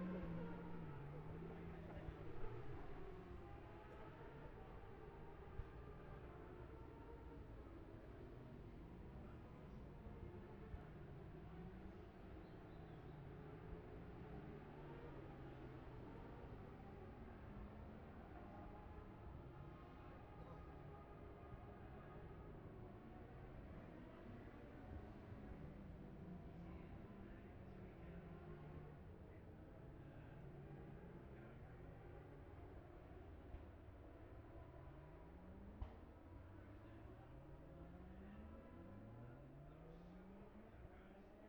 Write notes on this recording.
bob smith spring cup ... 600cc group B practice ... luhd pm-01 mics to zoom h5 ...